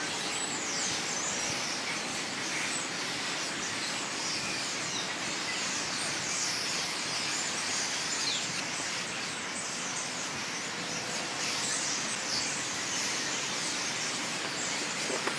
Auxerre, France - Turbulent birds before a storm [Auxerre]
Ville.Dans les arbres du parking, une masse d'oiseaux s'agitent, il fait lourd.
City.In the tree of the parking.A lot of birds become noisy.A storm is coming.
20 July